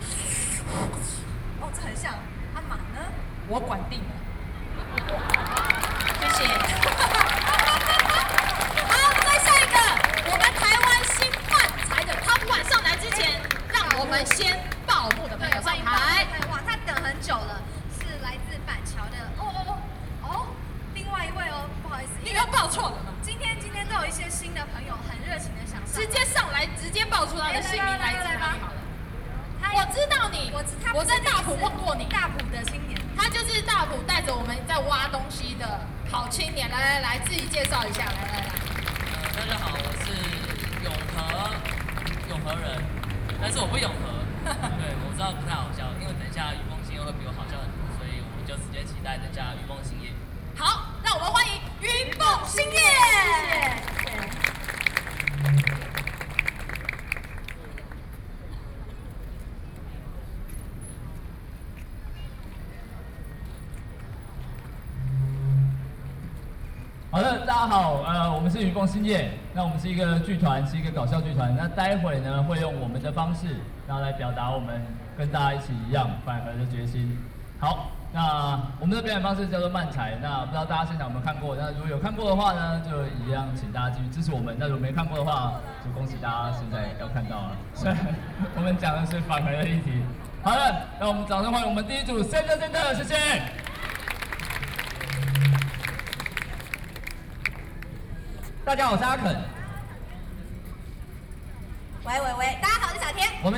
Opposition to nuclear power
Binaural recordings